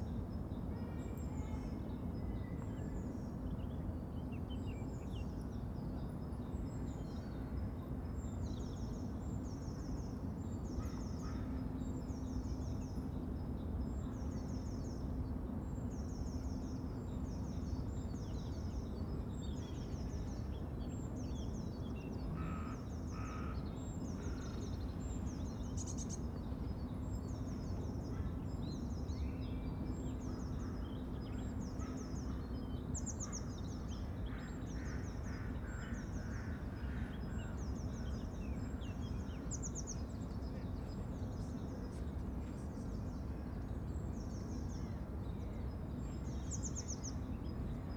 Paddington Old Cemetery, Kilburn, London - Paddington Old Cemetery
12°C
2 km/hr 270
England, United Kingdom, 31 March